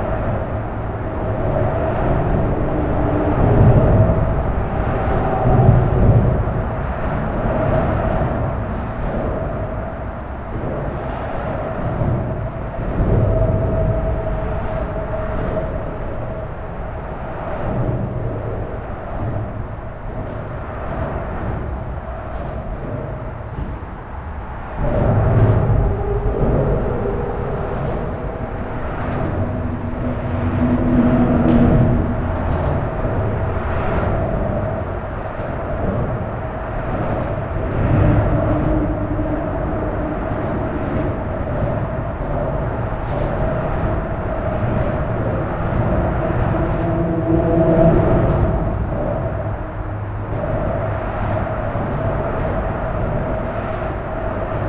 berlin, bundesplatz: unter autobahnbrücke - the city, the country & me: under motorway bridge
strange and unfriendly place: unlighted parking under motorway bridge, suburban train arrives at the station close to the bridge
the city, the country & me: april 10, 2013
Berlin, Deutschland, European Union